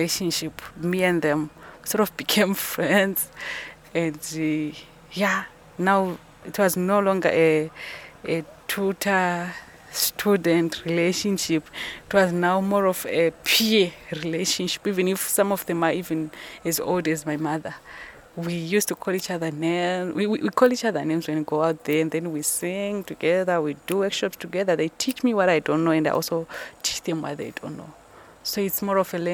Office of Basilwizi Trust, Binga, Zimbabwe - Abbigal Muleya - they teach me what i don't know...
Abbigal describes her work with rural women, the partnership she experiences “they teach me what I don’t know, and I teach them what they don’t know”… and she relates how she re-tells information she has gathered in online research to the local crafts women so that together with them, and based on their knowledge and skills, new products and new ways of production can be developed …
The recordings with Abbigal are archived here:
12 November